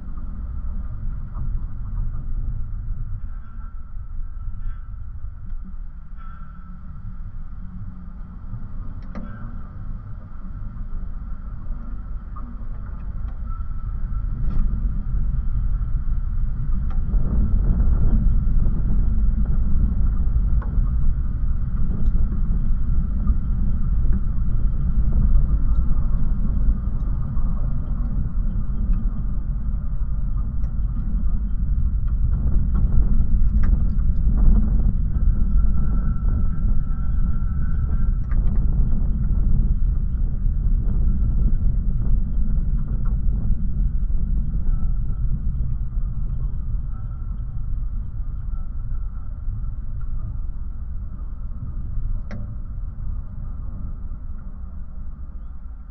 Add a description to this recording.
very windy day. contact mics on water ski tower support wires